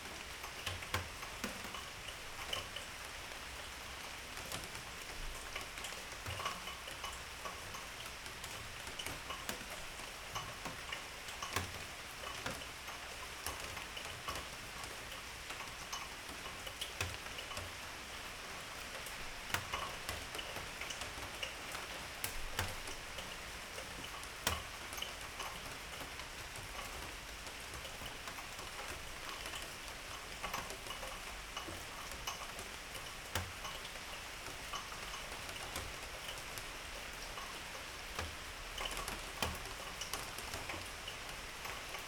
Berlin Bürknerstr., backyard window - night rain
rain at night, world listening day